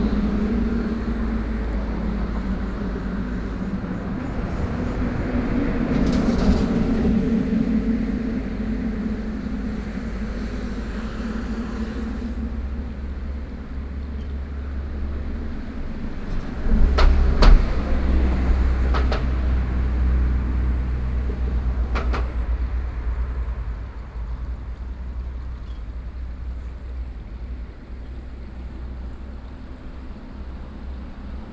北部濱海公路, 瑞芳區南雅里, New Taipei City - Traffic Sound
Traffic Sound
Sony PCM D50